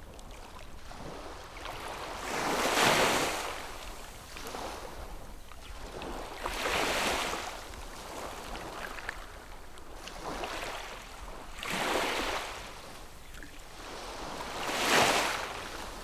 芙蓉澳, Nangan Township - Small beach
Small beach, Small pier, Birds singing, Sound of the waves
Zoom H6+ Rode NT4